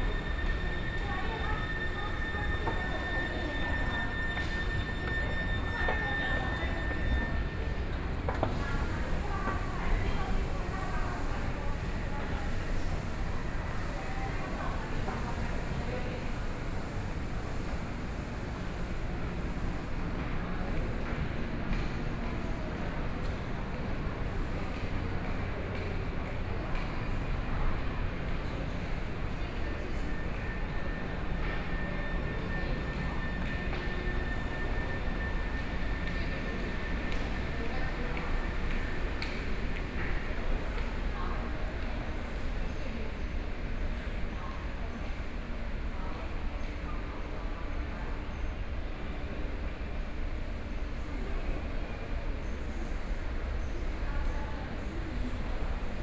Wanfang Hospital Station - soundwalk
walking in the MRT Station, Sony PCM D50 + Soundman OKM II